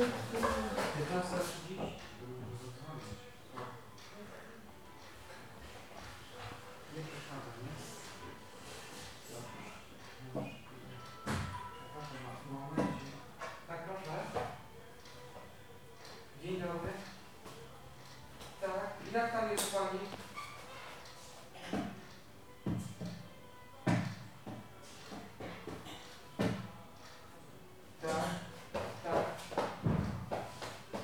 Poznan, Winiary district, apartament building at Bonin str - dentists waiting room
sounds of dentist's tools. conversation with the patient and with another patient over the phone. dental technician coming late. stream of popular radios station. in the waiting room, a bored little girl sigh and singing under her breath.